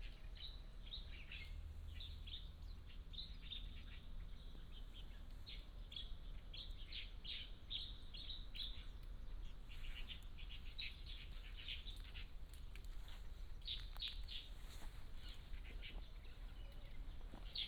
清水村, Nangan Township - Birdsong
Birdsong
Binaural recordings
Sony PCM D100+ Soundman OKM II